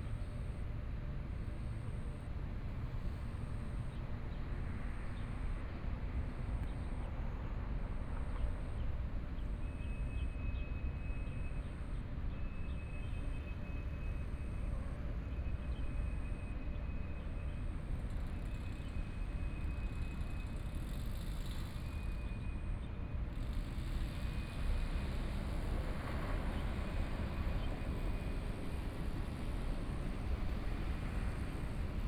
The square outside the station area, The town's environmental sounds, Train traveling through, Binaural recordings, Zoom H4n+ Soundman OKM II